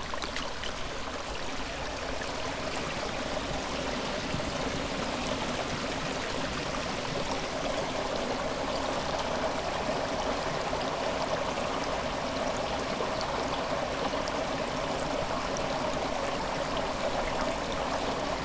studenice, slovenia - at the concreet barrier, water through long haired moss